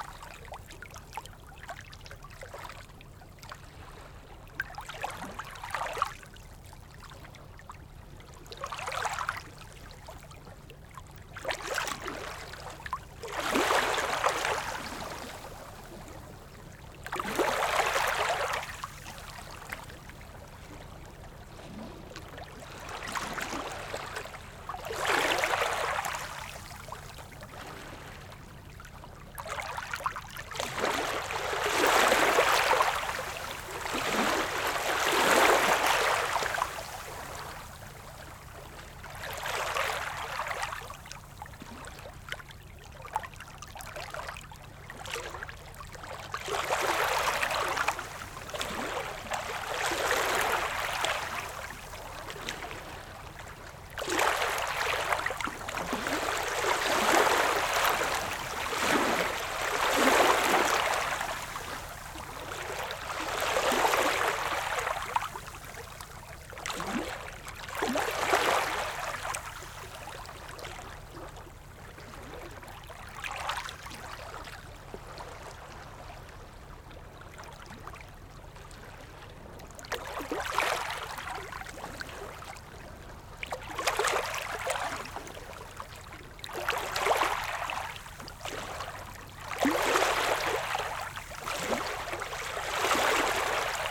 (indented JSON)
{
  "title": "Degerhamnsvägen, Degerhamn, Sverige - Small waves stoney beach",
  "date": "2020-09-10 14:18:00",
  "description": "Small waves stoney beach. Recorded with zoom H6 and Rode ntg 3. Øivind Weingaarde.",
  "latitude": "56.36",
  "longitude": "16.41",
  "timezone": "Europe/Stockholm"
}